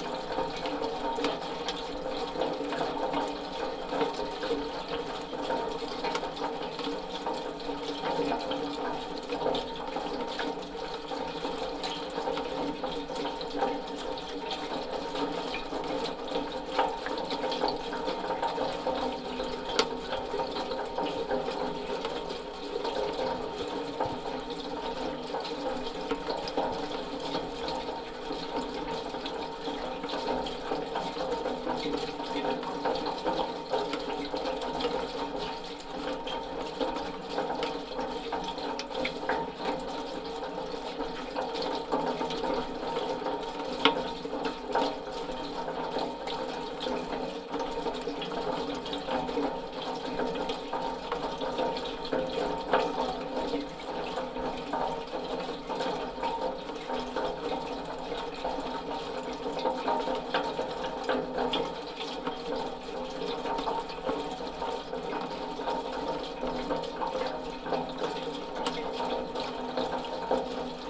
Mont-Saint-Guibert, Belgique - The gutter
It's raining since a long time. We are here at the town hall and the library. A gutter is making strange noises with the rain. This is recorded with two contact microphones sticked on each side of the gutter.
Mont-Saint-Guibert, Belgium